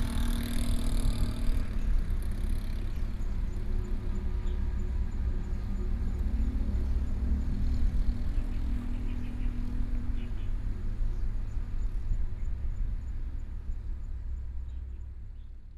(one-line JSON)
{"title": "梗枋漁港, Yilan County - Fish Port", "date": "2014-07-29 15:33:00", "description": "Fish Port, Traffic Sound, Birdsong sound, Hot weather", "latitude": "24.90", "longitude": "121.87", "altitude": "5", "timezone": "Asia/Taipei"}